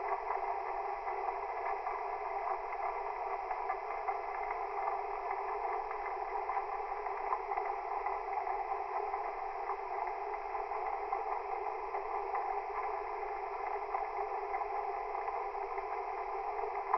Strandbadweg, Unterwasser, Mannheim, Deutschland - Strandbad Unterwasserwelt
Strandbad, Rhein, Unterwasser, Schiffsmotor